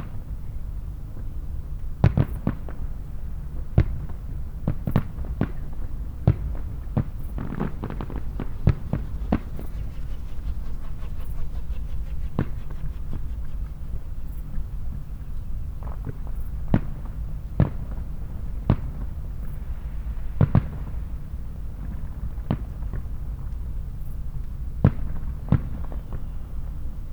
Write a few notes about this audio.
A distant fireworks display echoes across the shallow valley towards the Severn. A bird flies through the garden. Recorded with a MixPre 6 II and 2 Sennheiser MKH 8020s propped up on a kitchen chair under the roof of the pergola for protection.